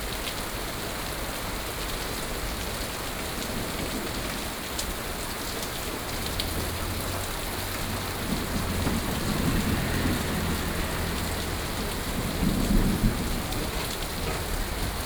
Ln., Sec., Xinyi Rd., Da’an Dist., Taipei City - Heavy rain
Heavy rain, Traffic Sound